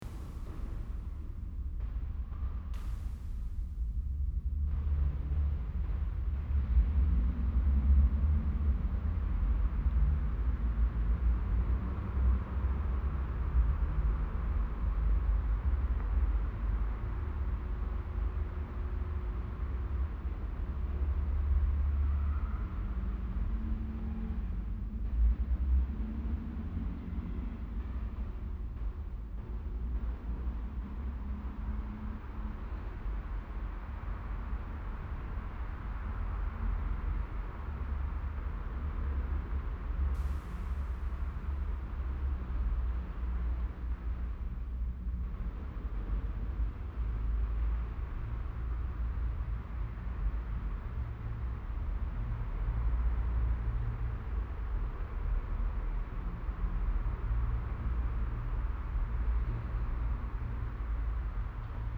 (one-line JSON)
{"title": "Rüttenscheid, Essen, Deutschland - essen, st.andreas church", "date": "2014-04-12 14:00:00", "description": "Im Kirchenraum der St. Andreas Kirche. Die Stille des Ortes im Hintergrund der Stadtambience.\nInside the St. Andreas Church. The silence of the space.\nProjekt - Stadtklang//: Hörorte - topographic field recordings and social ambiences", "latitude": "51.44", "longitude": "7.01", "altitude": "112", "timezone": "Europe/Berlin"}